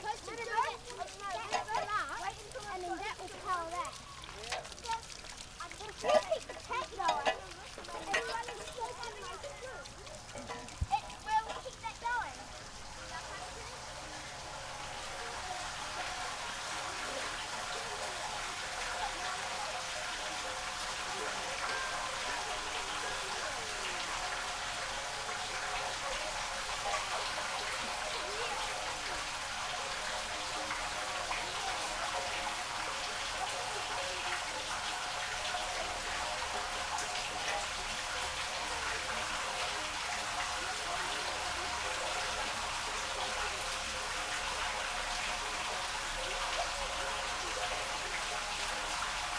Wynyard Quarter, Auckland, New Zealand - Water playground
Kids playing with water pump, auger, metal dams at water playground.
PCM-D50 w on-board mics
October 2016